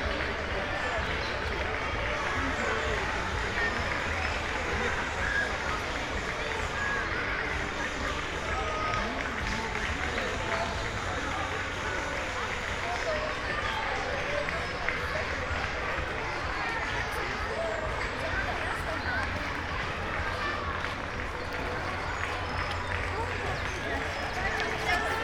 Eversten Holz, Oldenburg - kids marathon starting
hundreds of school kids start running, heard within the forest
(Sony PCM D50, Primo EM172)
Oldenburg, Germany